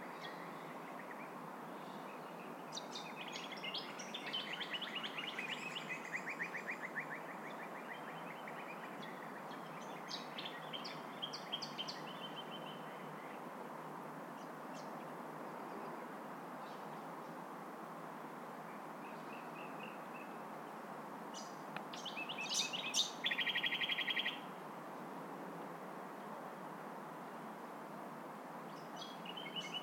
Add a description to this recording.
This is a field recording from the natural burial section of Fremantle Cemetary, next to where Dr. Anna Alderson was buried. She was a good friend, and a education mentor for several years and she has been missed since 5th June, 2016. The site now has 2 new native trees planted upon it, and is surrounded by beautiful native gardens. As Annas ex-gardener, I know she would loved this area for her burial site. I only wish my audio recording was as interesting as she was in life! Shot on a Zoom H2N with ATH-MX40 headphones. MS Mode +5